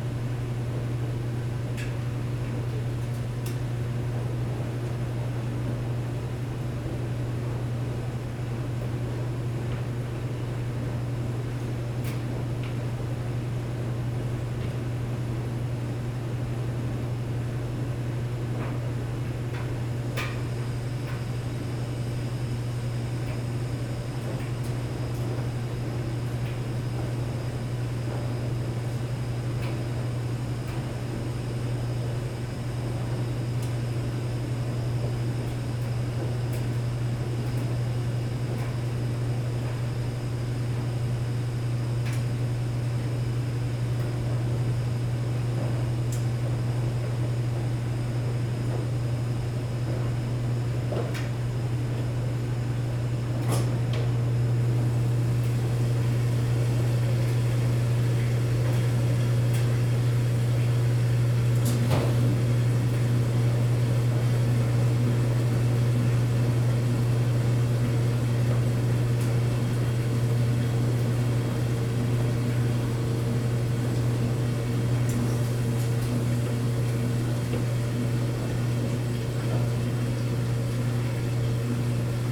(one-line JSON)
{"title": "New Residence Hall, The College of New Jersey, Pennington Road, Ewing Township, NJ, USA - Laundry Room", "date": "2014-02-28 20:30:00", "description": "This was recorded inside the basement laundry room. There is also a lot of noise from the HVAC system.", "latitude": "40.27", "longitude": "-74.78", "timezone": "America/New_York"}